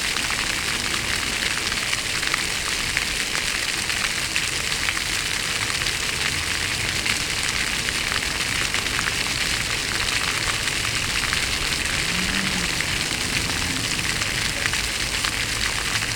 Fontaine Richard Lenoir (2)
Fontaine au sol boulevard Richard Lenoir - Paris
débit continu
Paris, France, 6 April 2011, 15:47